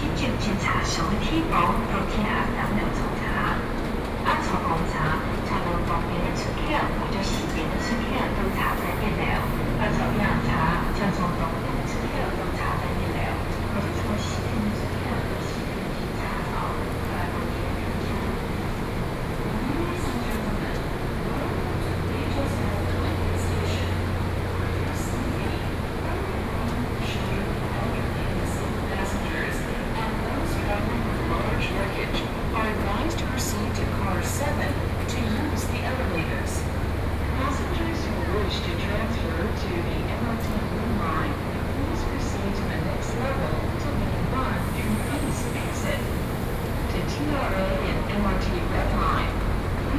Taipei Main Station, Taiwan - Platform
walking in the Platform, Zoom H4n+ Soundman OKM II
12 May 2013, 19:25